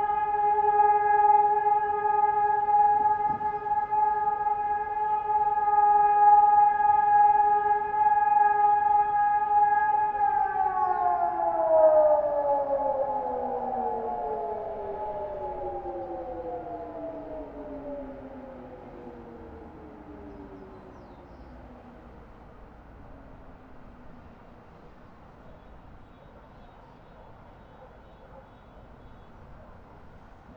Valparaíso, Chile - sirens
Valparaiso, sudden alarm, sirens, not clear if it was a test. heard at the open 1st floor window
(Sony PCM D50